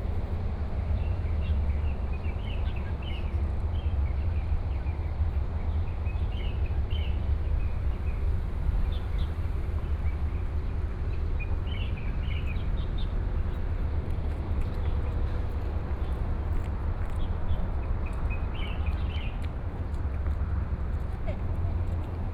衛武營都會公園, Kaohsiung City - in the Park
Walk in the park, Traffic noise is very noticeable Park, birds sound